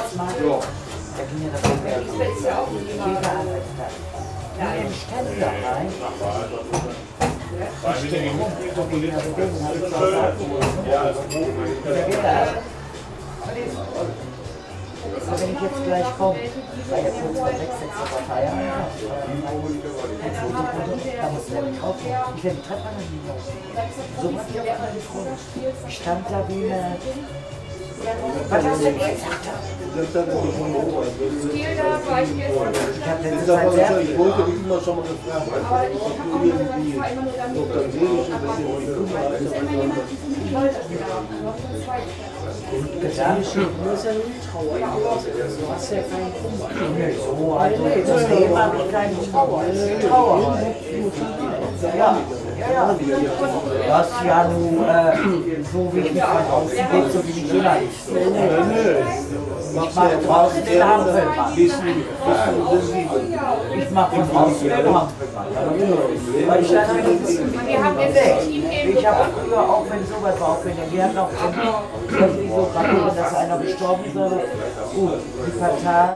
Essen-Karnap, Deutschland - alt carnap
alt carnap, karnaper str. 112, 45329 essen
Essen, Germany